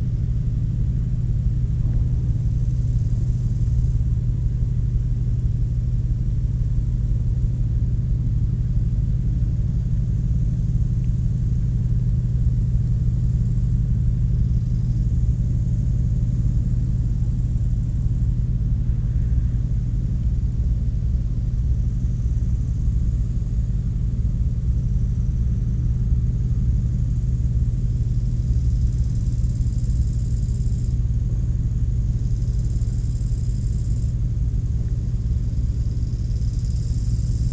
Locust singing on the high grass of the Saeftinghe polder. A big container from Hamburg Süd is passing on the schelde river.
Nieuw Namen, Netherlands